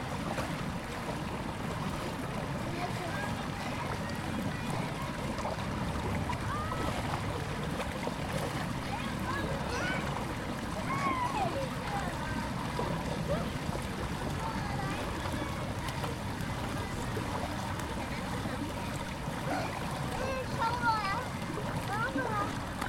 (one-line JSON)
{"title": "Kinderbad Marzili Bern", "date": "2011-06-10 15:17:00", "description": "Kinderbad im Marzili, Brustschwumm gemischt mit Hundeschwumm von einem kleinen Mädchen, die Mutter schaut kritisch zu", "latitude": "46.94", "longitude": "7.44", "altitude": "507", "timezone": "Europe/Zurich"}